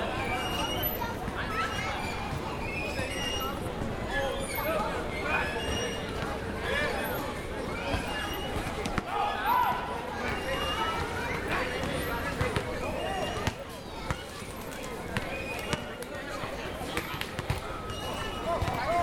Brighton Beach, Playground, Brooklyn NY, USA - Walking On the Boardwalk Past Brighton Playground
On the boardwalk at Brighton Beach Seashore, Brooklyn, NY, walking past the Brighton Playground. Children playing on swings, young men playing basketball, people speaking Russian on the benches, children in strollers.